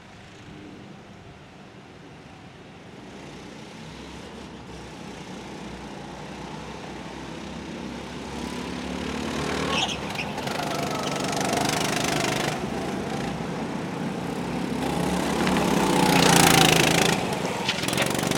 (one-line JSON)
{"title": "Brussels, Belgium - Go-kart racing", "date": "2013-06-30 17:52:00", "description": "This is a recording made at Udo's request during a recent adventure in Brussels! We were walking to the final venue for the Tuned City Festival when we heard the wonderful sonorities of go-karting cascading down the street. Udo asked me to record the sound for him, so here is what I heard outside, looking across the tarmac and watching the drivers. ENJOY! Recorded with Audio Technica BP4029 stereo shotgun and FOSTEX FR-2LE recorder.", "latitude": "50.91", "longitude": "4.42", "altitude": "14", "timezone": "Europe/Brussels"}